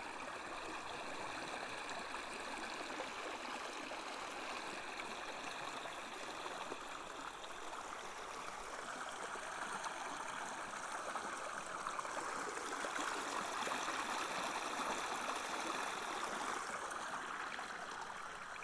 Minnehaha Avenue, Takapuna, Auckland, New Zealand - On the edge of lake and sea
Moving through various sonic fields between the Pupuke Lake out-flow and lava and the sea
26 August